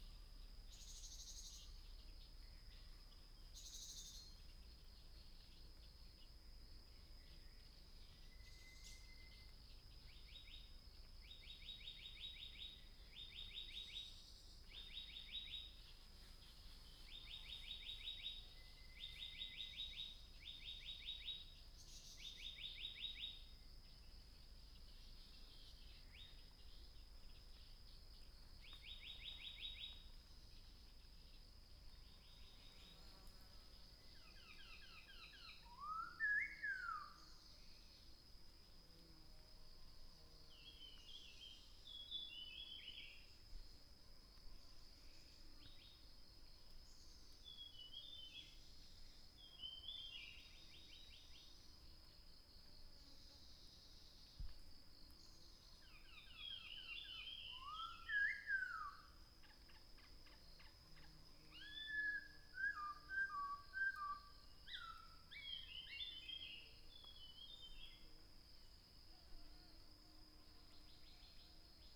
Hualong Ln., Yuchi Township, Nantou County - Birds singing
Birds singing, in the woods, dog